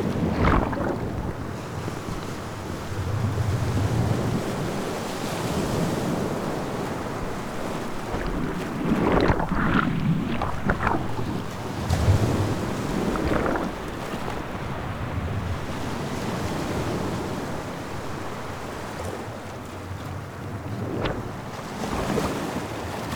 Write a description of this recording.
This is an experiment using 2 x Beyer Lavaliers, 1 Hydrophone and 1 contact mic on a half submerged rock. Recorded on a MixPre 3.